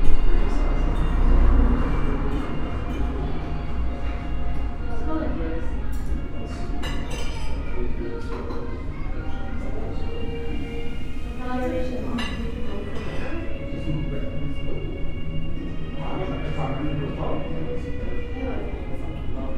The sounds of a pleasant cafe.
MixPre 3 with 2 x Rode NT5s in a rucksack.